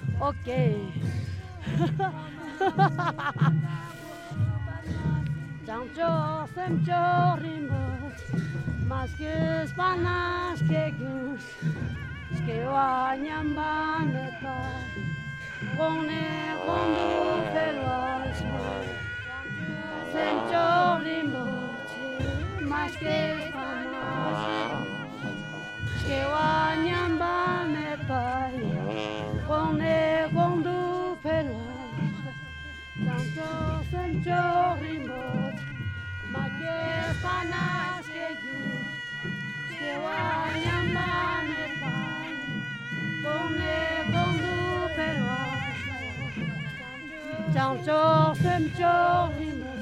8J6V5HMH+8R - Leh - Ladak - Inde
Leh - Ladak - Inde
Procession sur les hauteurs de la ville
Fostex FR2 + AudioTechnica AT825